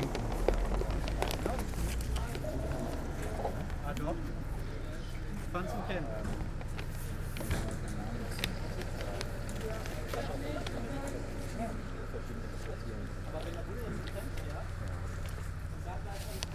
Marktplatz, Manheim, Germany - Klimacamp infostand
info stand for the climate camp activities which take place here in the village Manheim August and September. Manheim is directly affected by the brown coal mining and will probably disappear around 2022. Interesting note: the chief of RWE power, the company who runs the energy and mining business in this area, grew up in this village.
(Sony PCM D50, DPA4060)